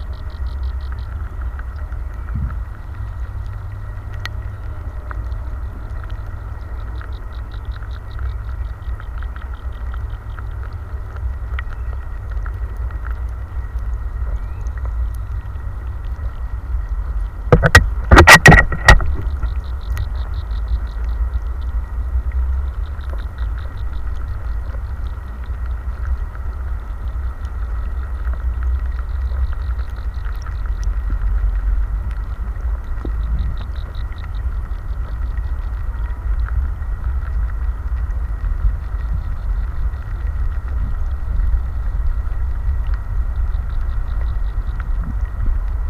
Dubelohstraße, Paderborn, Deutschland - Fischteiche unter Wasser
Mayor Franz-Georg
when you imagined
this place
over a hundred years ago
as the favourite walk
of the people
and the adornment
of the town
did you forehear
the noise of the cars
and the trains
even deep down
in the lake?
What are the swans
the geese and the ducks
dreaming about?
What were you doing
up there in the elm
and what did you hear
when you fell?
Can you hear me?
14 July 2020, 18:00